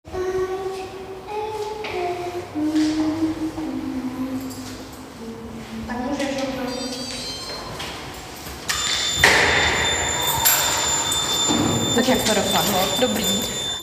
Litvínov, Česká republika - hallway voices
more infos in czech:
Česko, European Union, 26 May 2013, 17:04